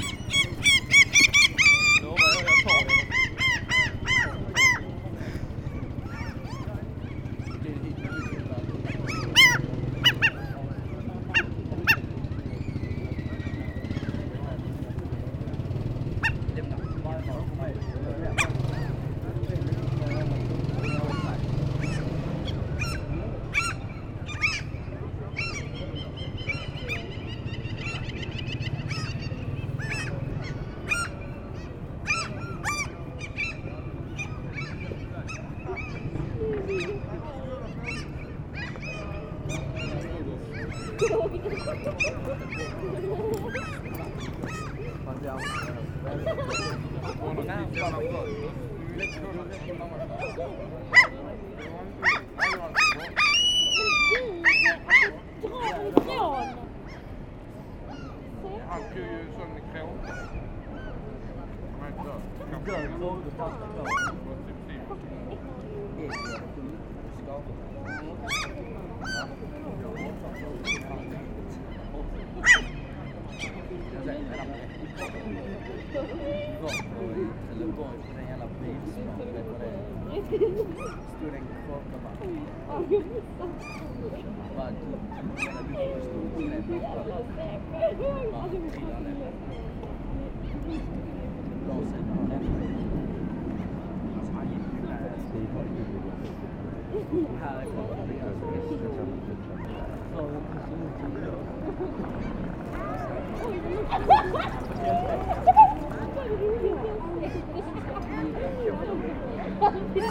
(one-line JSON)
{"title": "Malmö, Suède - Malmö main square", "date": "2019-04-17 16:20:00", "description": "During a very shiny afternoon on a day off, many people are staying on the main square of Malmö. Black-headed Gull shouting, two teenagers irritated with the bird, 3 persons sitting ON my microphones (they didn't see it !), Mallard duck eating bread crumbs and... my microphones. Tough life !", "latitude": "55.61", "longitude": "13.00", "altitude": "5", "timezone": "Europe/Stockholm"}